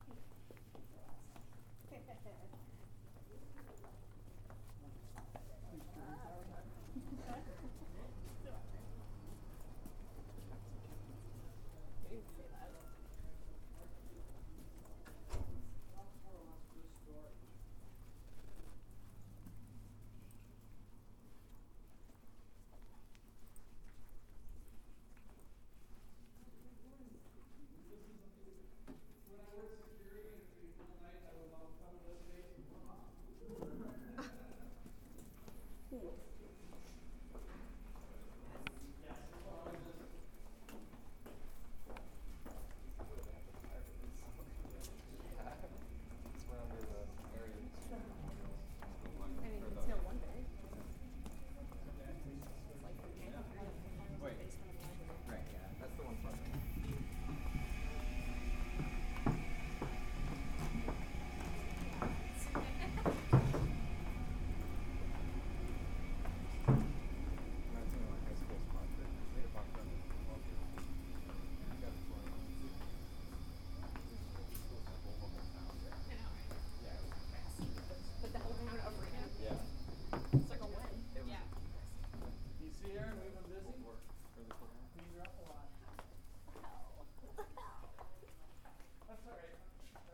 Appleton, WI, USA - Tunnel between Memorial Hall and Wriston
Walking in the underground tunnel between Memorial Hall and Wriston. There are a couple of different rooms, which hopefully you can hear on the recording–the buzz as we approach a machine at 0:10 and 0:46 which intensifies until 0:59, a door closes behind us at 0:15. Note how echoey it is at 0:29, but out footsteps around 1:07 sound very deadened.